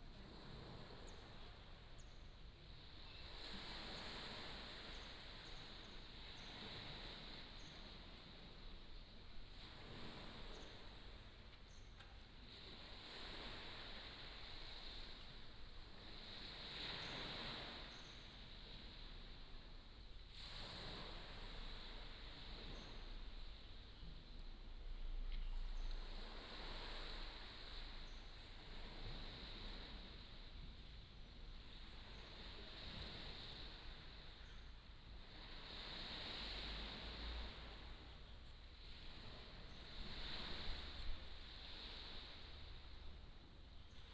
馬祖村, Nangan Township - In front of the temple
Sound of the waves, In front of the temple, Chicken sounds
福建省 (Fujian), Mainland - Taiwan Border